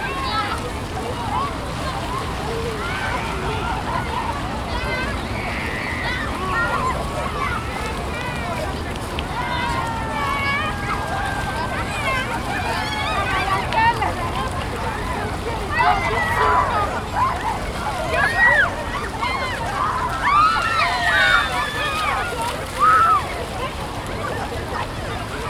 Mirroir d'eau - Quinconces, Bordeaux, France - The water mirror
Warm day, children running and shouting, their feet hitting the water mirror.
[Tech.info]
Recorder : Tascam DR 40
Microphone : internal (stereo)
Edited on : REAPER 4.611
13 April 2014